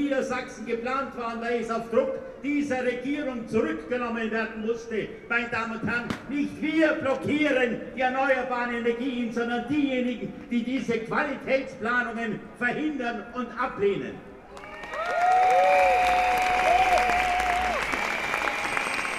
berlin, strasse des - no nukes protesters approaching
anti nuclear power demonstration reaches final destination.
Germany, March 2011